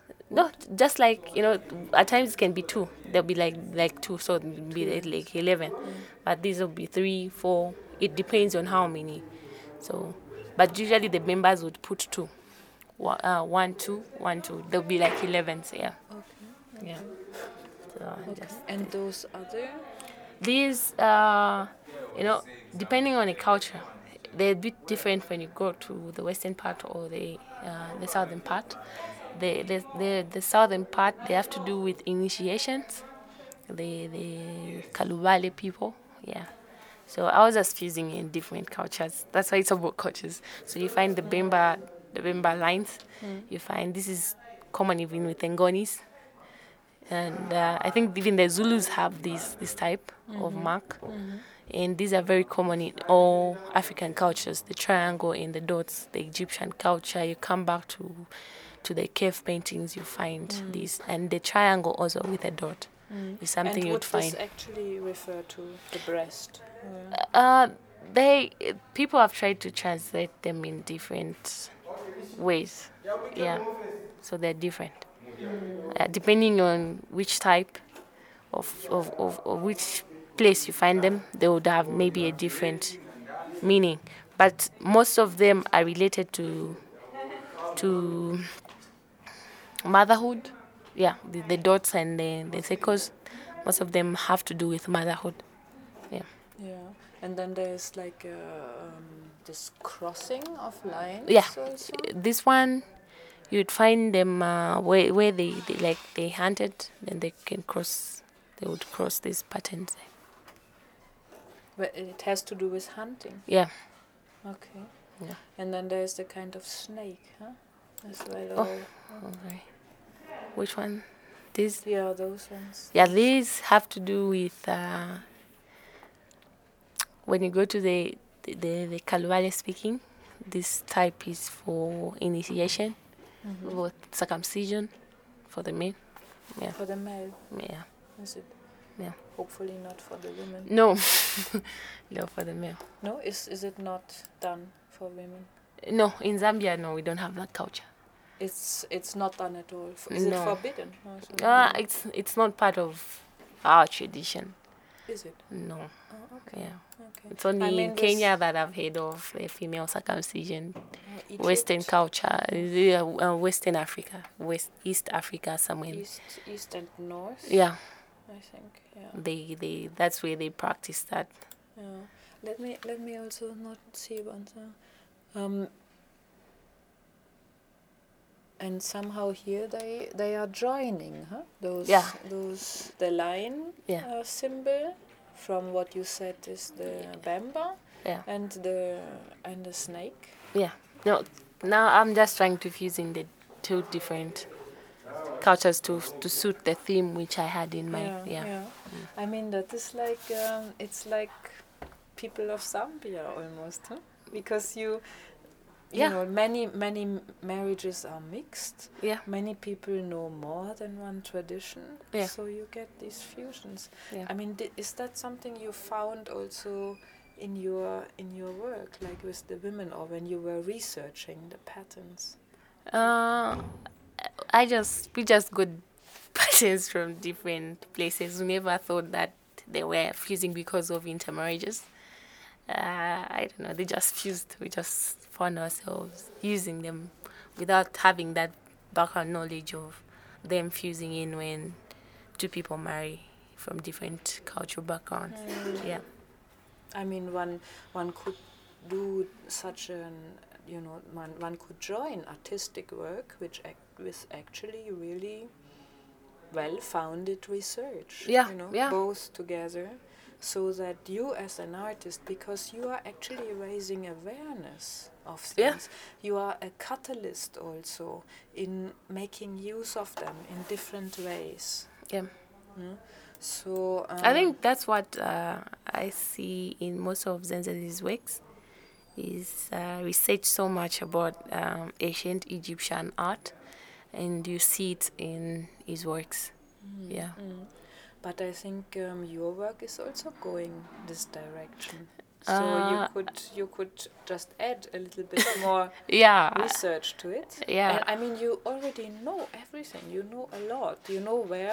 Visual Arts Council office, Lusaka, Zambia - Mulenga talking about her work...
We are with Mulenga Mulenga in the small office of the Visual Arts Council, in front of the computer screen. A lot of coming and going outside the boxed-up office in the entrance to the Henry Tayali Gallery. In these footage recordings, we are going with the artist on an audio journey through Mulenga's prolific work….
The entire recordings are archived at :